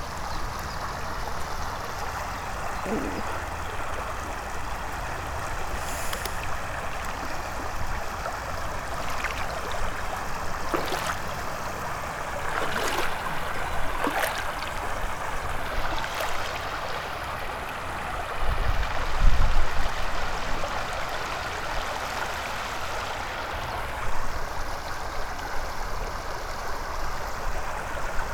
old part of river drava, melje - crossing the stream
Malečnik, Slovenia